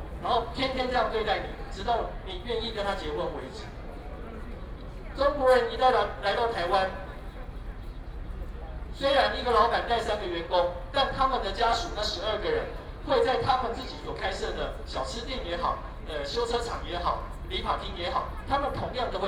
Walking through the site in protest, People and students occupied the Legislative Yuan
Binaural recordings
Taipei City, Taiwan, 20 March 2014, 22:59